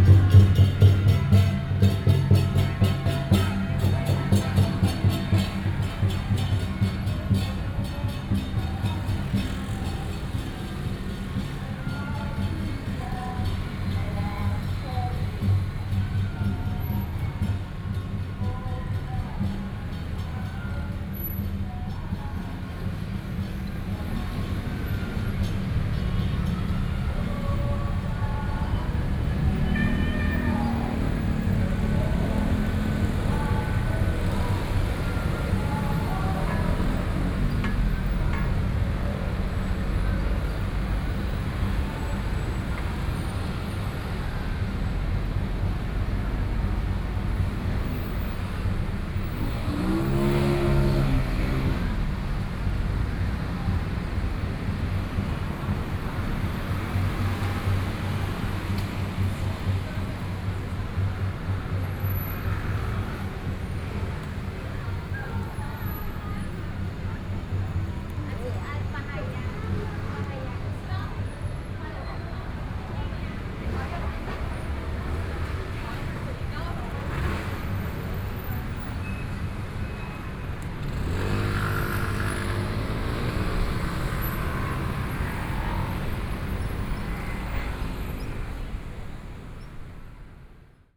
Luzhou, New Taipei City - Crossroads Edge
Crossroads Edge, Traditional temple parading, Traffic Noise, Binaural recordings, Sony PCM D50 + Soundman OKM II